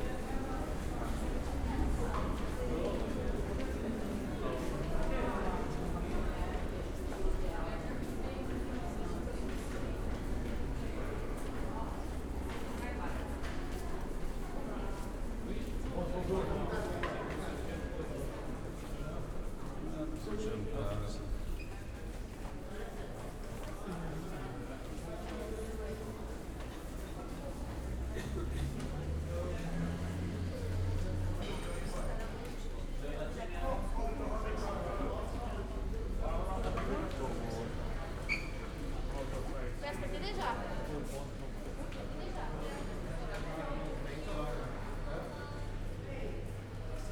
arrival at the ferry terminal, passage ambience, Mgarr, Gozo
(SD702, DPA4060)
Triq Ix Xatt, Mgarr, Malta, 4 April 2017